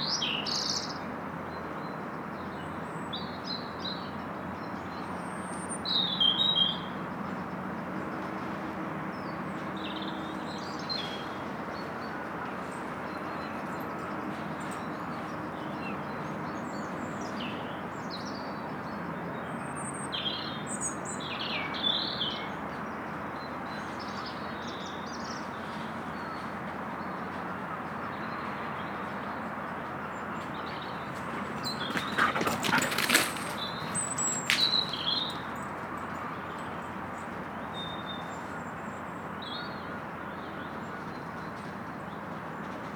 Love Ln, Penryn, UK - Raven and early morning sounds in the Graveyard in Love Lane
A recording made across the valley from the main road into Falmouth from Penryn, so hence road noise in the background but recorded in a graveyard that led down to the estuary. There are the sounds of some captive geese and hens along with Wrens, Robins Rooks and rather nicely a Raven, who came and sat in a tree just to the left and above my microphones. Sony M10 with two Sennheiser ME62 Omni mics with an Olsen Wing.